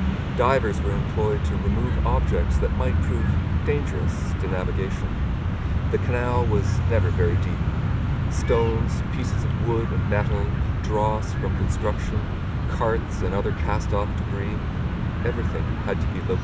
Soundscape of Atwater bridge area with text about ecological history of the area read by Peter C. van Wyck.
Montreal, QC, Canada, 29 September 2007